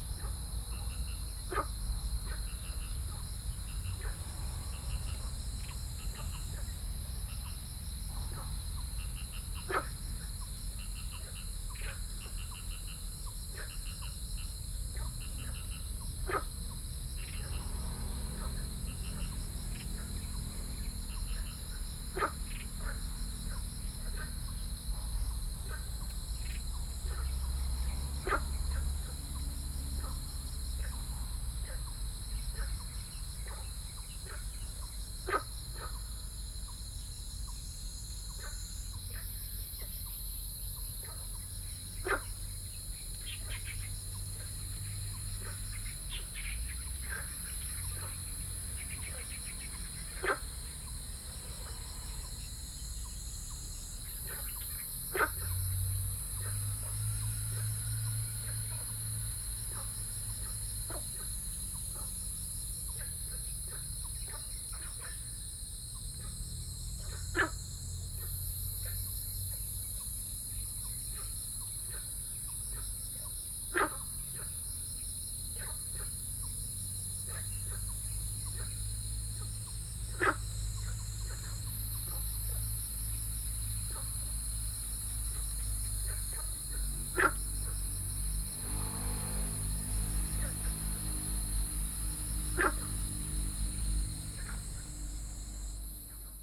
Xiaopingding, Tamsui Dist., New Taipei City - Frog and Birds
Next to the pool, Frog calls, Insect sounds, Birds singing, Binaural recordings, Sony PCM D50 + Soundman OKM II